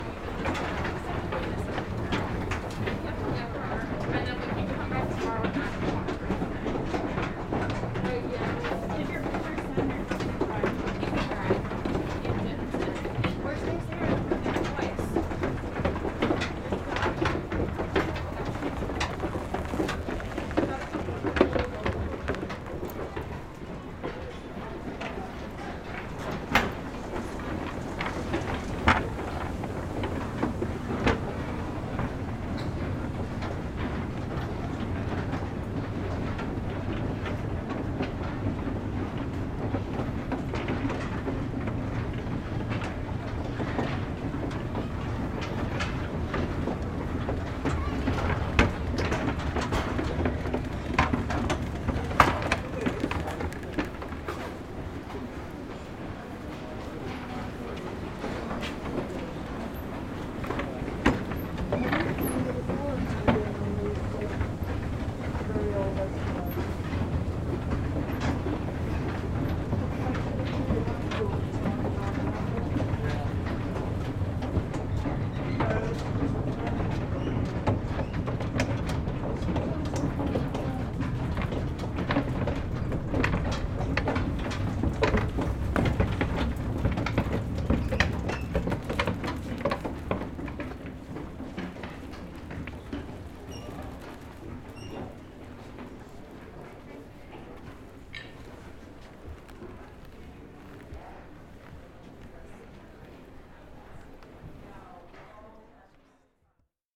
W 35th St, New York, NY, USA - Wooden Escalators from the 1920s and 1930s.
Sound of the wooden escalators at Macy's dating from the 1920s and 1930s.
The New York Times describes the sound as "Bu-da-bumbum, bu-da-bumbum, bu-da-bumbum."
Also, listen to the recording made by david.j.pitt :